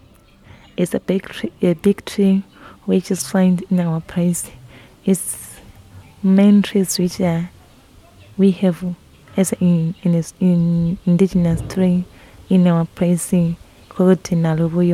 Zubo Trust, Binga, Zimbabwe - Our Baobab Maheo Project
We are sitting on the terrace of Zubo Trust's office, Margaret Munkuli talks about the successes and challenges the women of Nchibondo village have been facing with their local Baobab Maheo production.
5 May 2016, 13:15